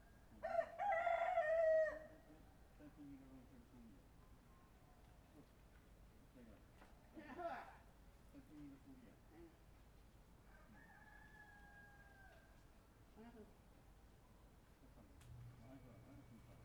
Shueilin Township, Yunlin - Neighbor's voice
On the second floor, Neighbor's voice, Early in the morning, Chicken sounds, Zoom H6 M/S
雲林縣(Yunlin County), 中華民國, 1 February 2014